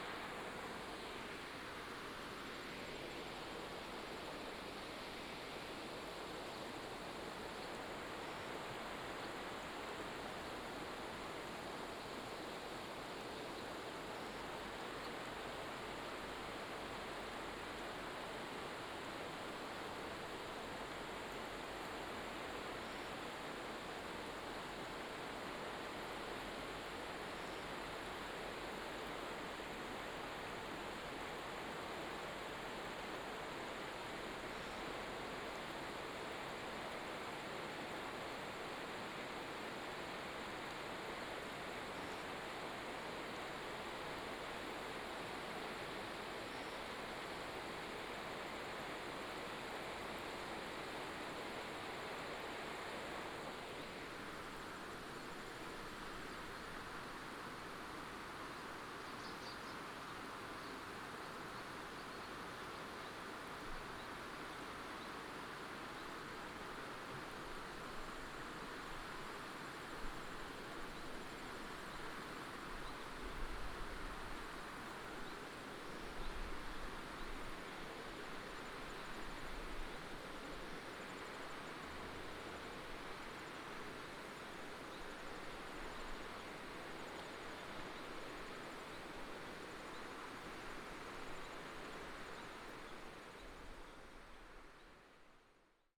魯拉克斯吊橋, Jinfeng Township, Taitung County - Walking on the suspension bridge
Stream sound, Walking on the suspension bridge, Bird cry